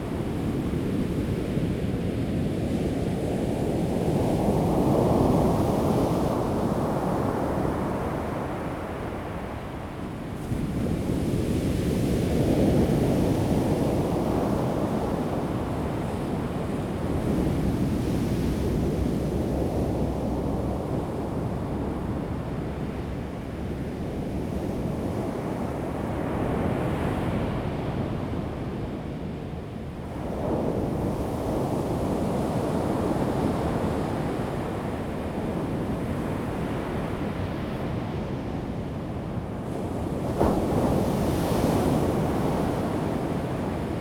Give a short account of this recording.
at the beach, Sound of the waves